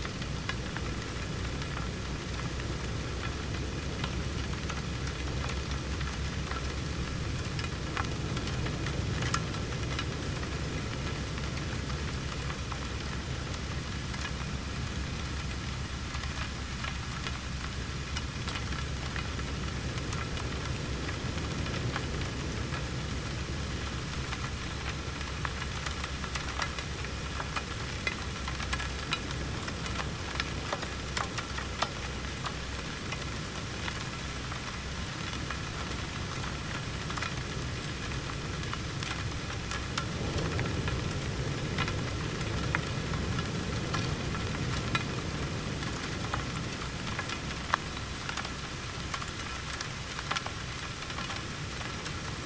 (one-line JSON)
{"title": "Oxnard Beach - Sand running through dredging tube", "date": "2019-03-01 08:00:00", "description": "Dredging ship HR Morris was stationed outside of Oxnard and the outlet pipe was run across the beach. This is the sound of sand and rocks running through the tube.", "latitude": "34.16", "longitude": "-119.23", "altitude": "2", "timezone": "America/Los_Angeles"}